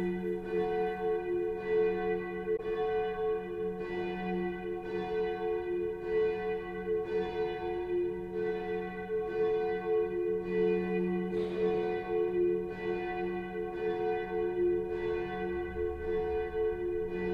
P.za Vescovado, Caorle VE, Italia - Bells of the Cathedral of Caorle
Suono delle campane del Duomo di Caorle (Venezia, Italy)